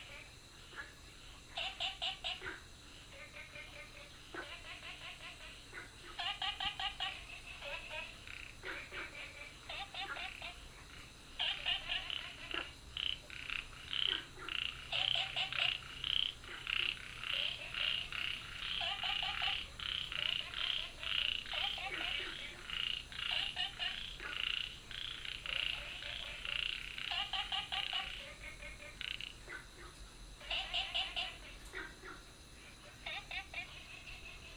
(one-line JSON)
{"title": "樹蛙亭, 埔里鎮桃米里 - Frogs chirping", "date": "2015-06-09 22:21:00", "description": "Frogs sound\nBinaural recordings\nSony PCM D100+ Soundman OKM II", "latitude": "23.94", "longitude": "120.93", "altitude": "459", "timezone": "Asia/Taipei"}